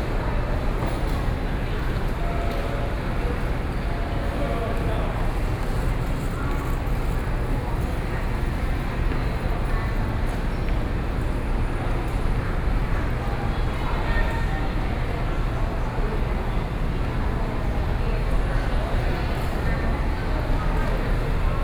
Taoyuan Station - soundwalk
Enter the hall from the station to the station platform, Train arrived, Zoom H4n+ Soundman OKM II
Taoyuan County, Taiwan